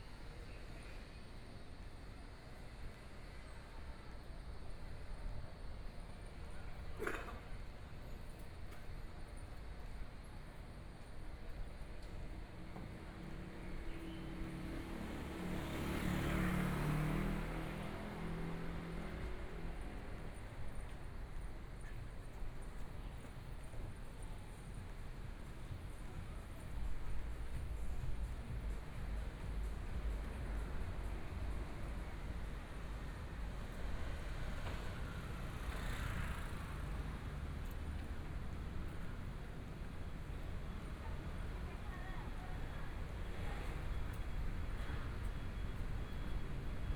Walking in the street, Traffic Sound, Through a variety of different shops, Binaural recordings, Zoom H4n+ Soundman OKM II
Zhongshan District, Taipei City, Taiwan, 6 February 2014, ~14:00